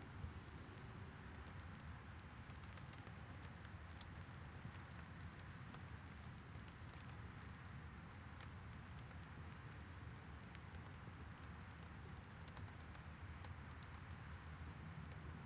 Recording made next to the Staples Subdivision rail road tracks in Ramsey, Minnesota. One train goes by during the recording. It was a rainy March day and the recorder was being sheltered by a cardboard box so the sound of the rain on the box can be heard aas well as drops falling on the windscreen. This location is adjacent to a garbage truck depot as well as a gravel pit so noises from that can also be hear. Nearby Highway 10 traffic can be heard as well.
This was recorded with a Zoom H5
Minnesota, United States, 22 March 2022, 15:11